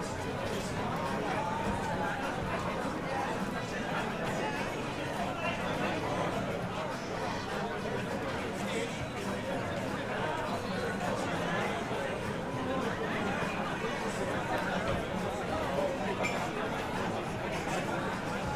Itzehoe, Deutschland - Christmas Market 2016 Itzehoe, Germany
Christmas Market 2016 Itzehoe, Germany, Zoom H6 recorder, xy capsule